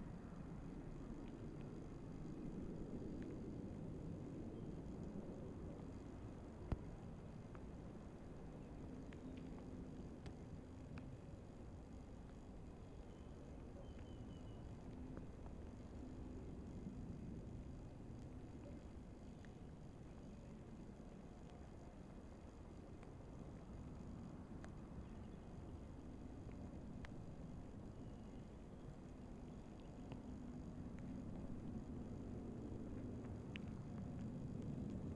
{"title": "Route de marestaing, Monferran-Savès, France - Lockdown 1 km - noon - angelus rings (East)", "date": "2020-04-03 12:05:00", "description": "Recorded during first lockdown, in the field near the road (1km from the church was the limit authorized).\nZoom H6 capsule xy\nDrizzle and mist.", "latitude": "43.59", "longitude": "0.99", "altitude": "217", "timezone": "Europe/Paris"}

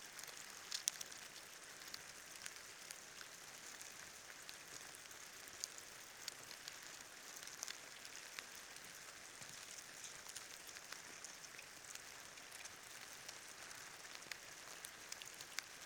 {"title": "Lithuania, Utena, awakened ants", "date": "2013-05-01 14:55:00", "description": "ants on the fallen tree on the old jew's grave", "latitude": "55.49", "longitude": "25.57", "altitude": "121", "timezone": "Europe/Vilnius"}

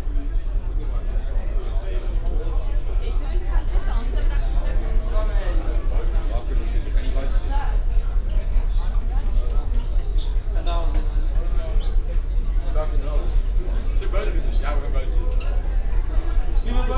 {"title": "puttgarden, on ferry", "date": "2010-08-13 18:43:00", "description": "on the ferry from puttgarden to roedby denmark - travellers invading the ship, anouncements in the distance, the hum of the motors\nsoundmap d - social ambiences and topographic field recordings", "latitude": "54.50", "longitude": "11.23", "timezone": "Europe/Berlin"}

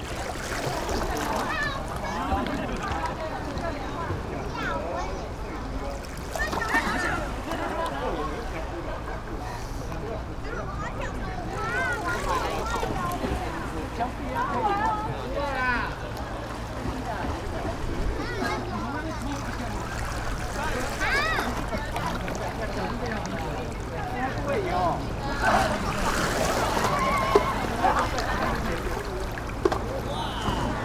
{"title": "tuman, Keelung - Water acoustic", "date": "2012-06-24 13:57:00", "description": "Water acoustic, Play in the water, Sony PCM D50", "latitude": "25.16", "longitude": "121.76", "altitude": "255", "timezone": "Asia/Taipei"}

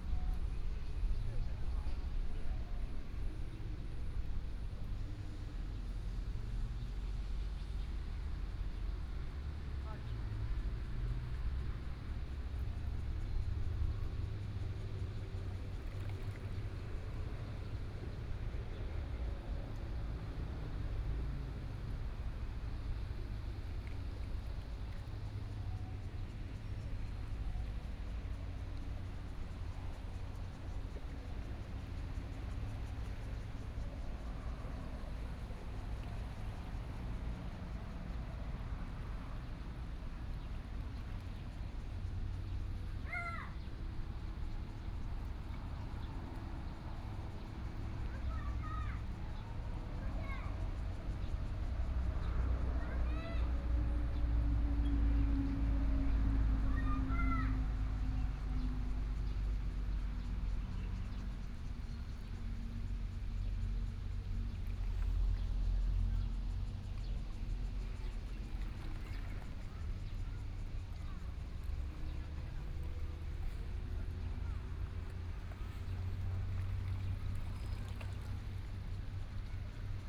{"title": "Liyu Lake, Shoufeng Township - Near the pier", "date": "2014-08-28 11:03:00", "description": "Lake voice, Traffic Sound, Yacht on the lake, Tourists, Very hot days", "latitude": "23.93", "longitude": "121.51", "altitude": "139", "timezone": "Asia/Taipei"}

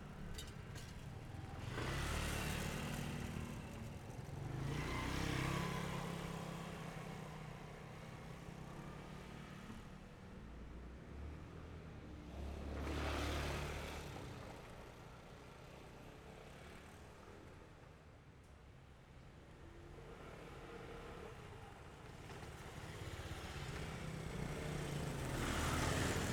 {"title": "中山東路一段223巷129弄, Zhongli Dist. - the train passes by", "date": "2017-08-20 17:27:00", "description": "Small Railway crossings, motorcycle sound, the train passes by, Binaural recordings, Zoom H6 XY", "latitude": "24.96", "longitude": "121.24", "altitude": "138", "timezone": "Asia/Taipei"}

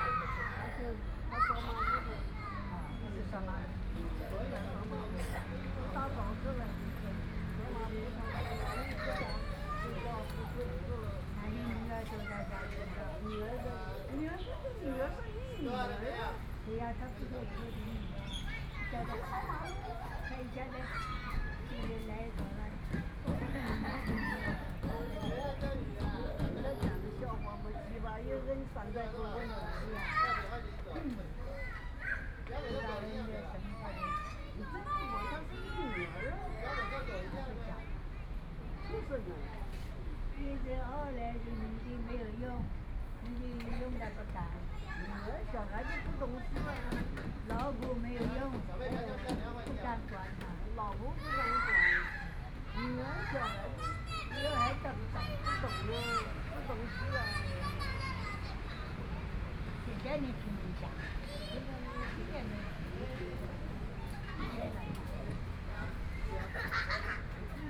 {"title": "SiPing Park, Taipei City - in the Park", "date": "2014-04-04 16:21:00", "description": "Kids play area, Voice chat between elderly, Holiday in the Park, Sitting in the park, Traffic Sound, Birds sound\nPlease turn up the volume a little. Binaural recordings, Sony PCM D100+ Soundman OKM II", "latitude": "25.05", "longitude": "121.53", "altitude": "15", "timezone": "Asia/Taipei"}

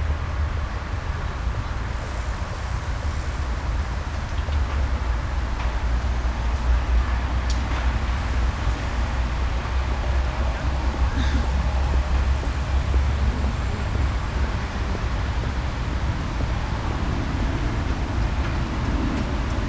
{"title": "Hasenheide ambience", "date": "2010-09-22 17:15:00", "description": "ambience recording in Hasenhiede Berlin", "latitude": "52.48", "longitude": "13.42", "altitude": "50", "timezone": "Europe/Berlin"}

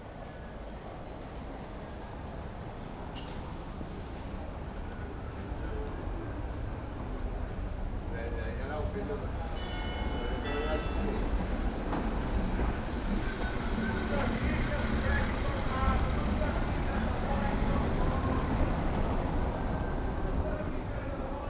{"title": "Guimarães, Largo da Oliveira - trashing bottles at Largo da Oliveira", "date": "2013-10-02 16:16:00", "description": "abmience around one of the squares in the old part of Guimarães. people talking at tables, restaurant worker throwing out the trash, bells of the churches, homeless person shouting at tourists, old motorcycle passing by", "latitude": "41.44", "longitude": "-8.29", "altitude": "193", "timezone": "Europe/Lisbon"}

